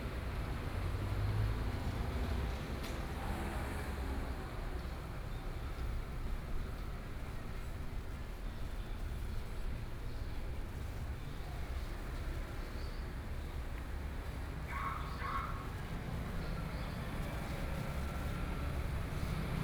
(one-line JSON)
{"title": "Yangmei, Taoyuan - Traffic Noise", "date": "2013-08-14 12:06:00", "description": "Noon, the streets of the community, traffic noise, Sony PCM D50+ Soundman OKM II", "latitude": "24.92", "longitude": "121.18", "altitude": "195", "timezone": "Asia/Taipei"}